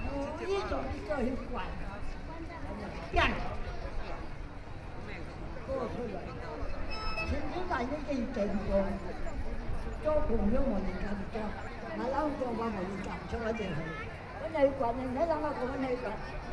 Taipei City Hakka Cultural Park - storyteller
A very old age, old people use Hakka, Facing the crowd talking story, Binaural recordings, Sony PCM D50 + Soundman OKM II